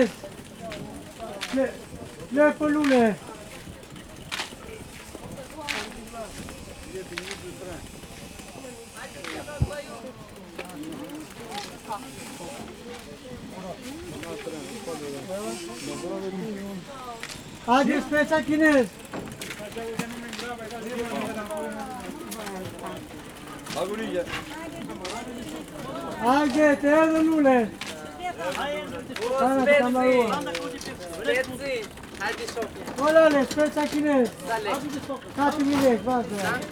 Following a man with a trolley who is trying to sell plants at the bazar. XY.
10 September 2012, ~12:00